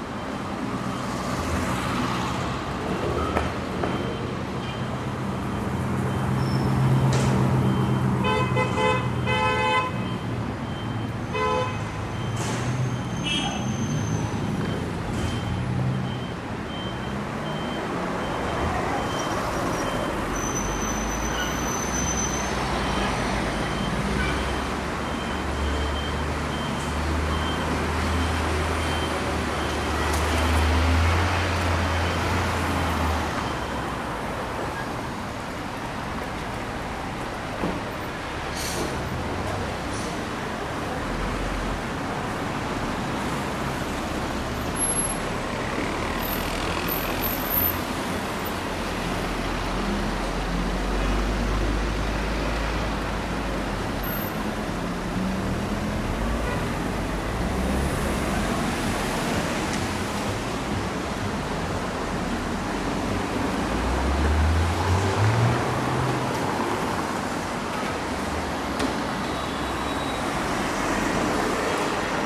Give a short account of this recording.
Fullmoon on Istanbul, nightwalk from Fulya through Şişli to Nışantaşı. Part I